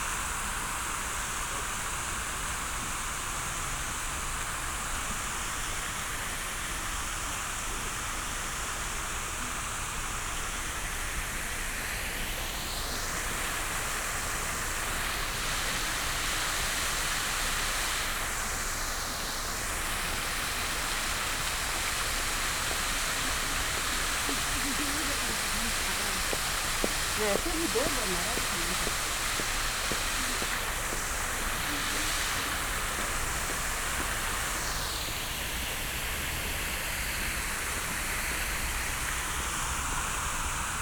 short walk around fountain at Novi trg, Ljubljana. water sounds reflecting on things and walls around the fountain. weekday morning, not many people around.
(Sony PCM D50, DPA4060)